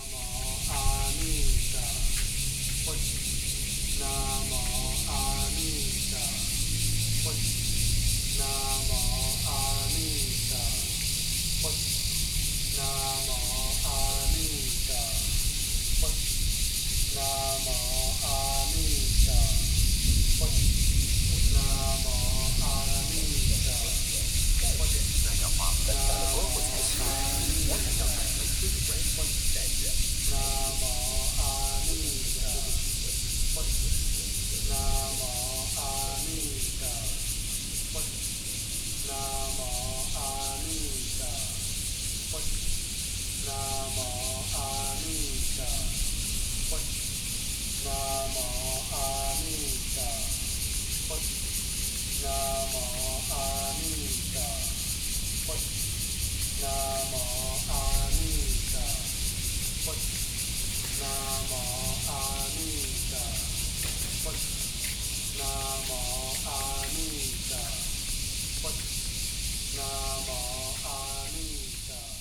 Temples nearby, Cicada sounds
Binaural recordings
Sony PCM D50+ Soundman OKM II SoundMap20120706-32)